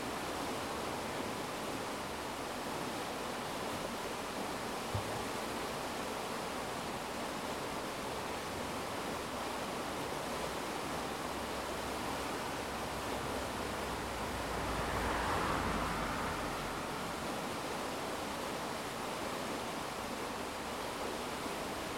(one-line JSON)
{"title": "Woodmill, Southampton, UK - 014 Weir", "date": "2017-01-14 20:49:00", "latitude": "50.94", "longitude": "-1.38", "altitude": "10", "timezone": "GMT+1"}